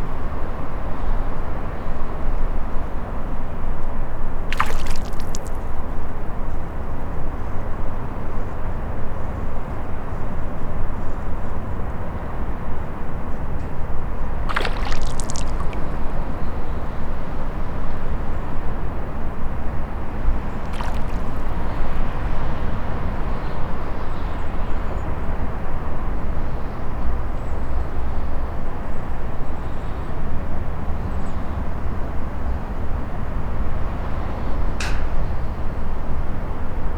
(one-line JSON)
{"title": "Binckhorst, Den Haag - Little Bird", "date": "2012-02-06 18:59:00", "description": "A little bird practicing his diving skills in the cold water between several pieces of floating blocks of ice.\nRecorded using a Senheiser ME66, Edirol R-44 and Rycote suspension & windshield kit.", "latitude": "52.06", "longitude": "4.34", "altitude": "1", "timezone": "Europe/Amsterdam"}